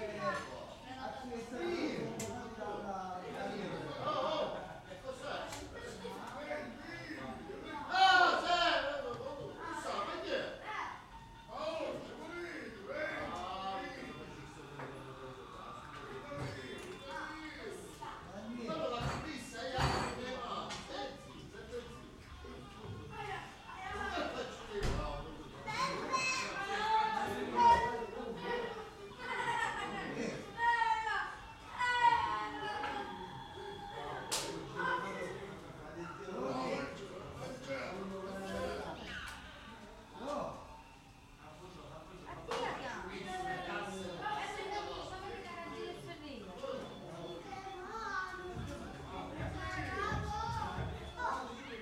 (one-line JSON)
{"title": "Via Ambra, Palermo PA, Italy - 22 01 16 palermo via ambra room 04 street chat", "date": "2022-01-16 21:39:00", "description": "Ambient recording at this location using a Zoom h5 and a matched pair of Clippy EM272 high sensitivity omni-directional low noise microphone's. Audio contains chatter from the surrounding neighbours in these narrow lanes where they shout across to each other.", "latitude": "38.12", "longitude": "13.36", "altitude": "18", "timezone": "Europe/Rome"}